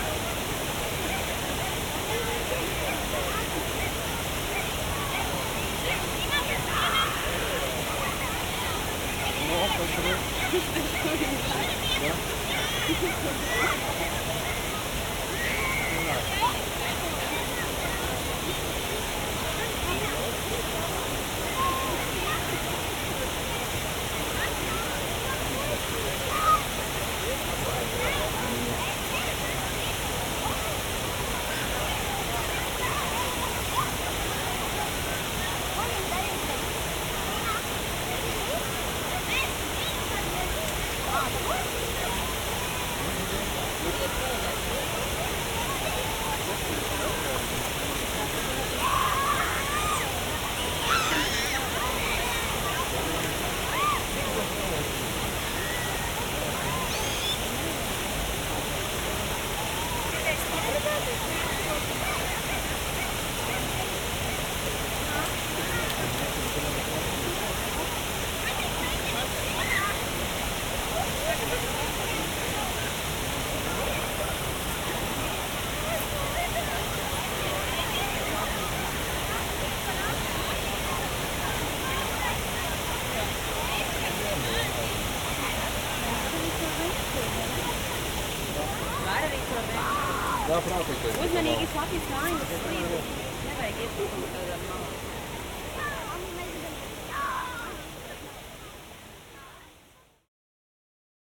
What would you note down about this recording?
Well known river Venta waterfall in Kuldiga. The place overcrowded with people.